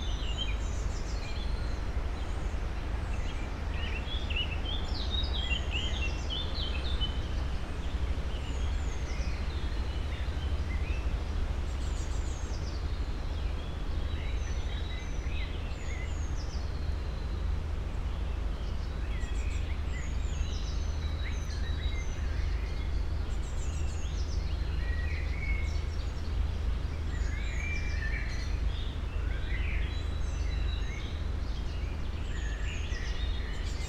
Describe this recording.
screams and other voices of peacocks, birds, wind through tree crowns ... sonic research of peacock voices at their double caging site - island as first, metallic pavilion as second